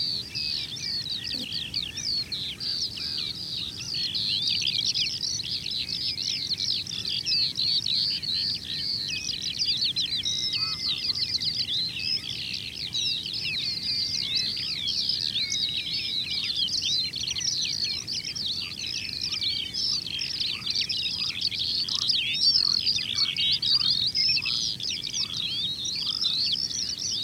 Higham Saltings, Gravesend, UK - Skylarks on Higham Saltings
Skylarks, flies, frogs, and general atmosphere on Higham Saltings, Kent, UK.